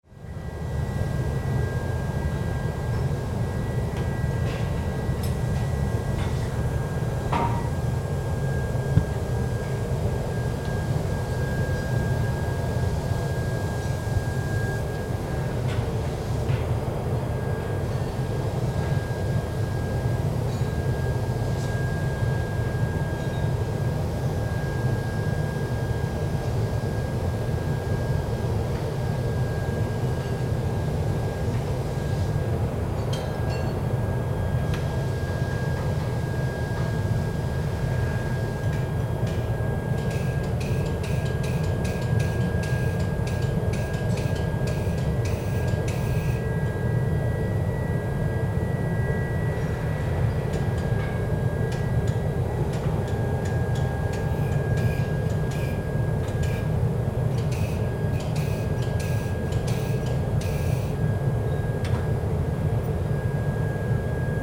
langenfeld, steel factory
industry hall - recording inside a factory for steel production of the company Schmees - here: general atmosphere
soundmap nrw/ sound in public spaces - in & outdoor nearfield recordings
Langenfeld, Germany, 22 July